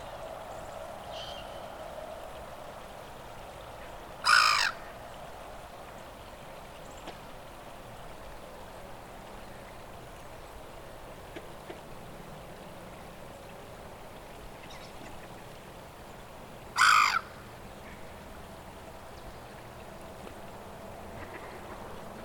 River Coquet, Warkworth, Northumberland. United Kingdom - River Coquet Wildlife, Warkworth.
A detailed recording of wildlife and suroundings at the bend of the River Coquet as it leaves the cozy village of Warkworth, Northumberland.
Recorded on an early Saturday afternoon in the Spring 2015.